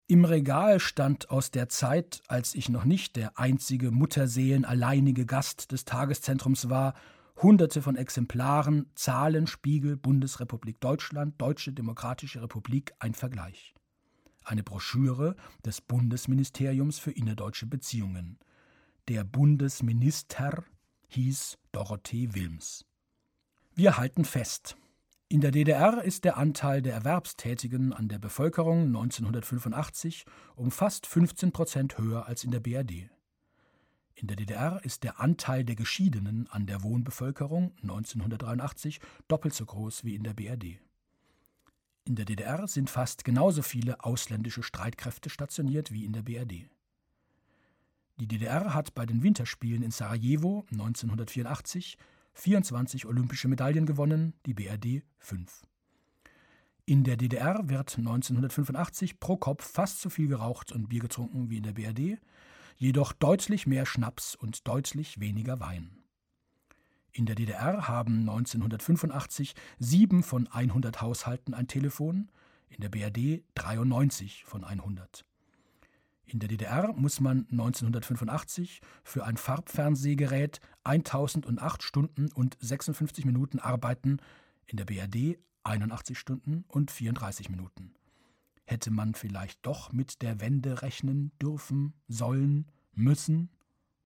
schnackenburg - deutschlandpolitisches bildungszentrum
Produktion: Deutschlandradio Kultur/Norddeutscher Rundfunk 2009
8 August 2009, 21:10